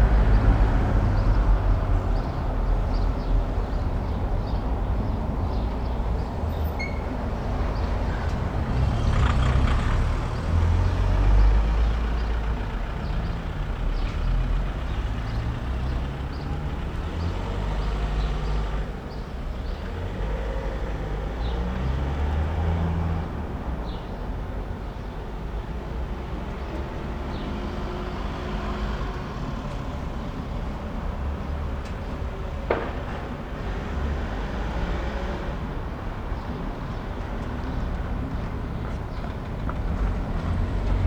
Berlin: Vermessungspunkt Friedelstraße / Maybachufer - Klangvermessung Kreuzkölln ::: 24.05.2011 ::: 10:27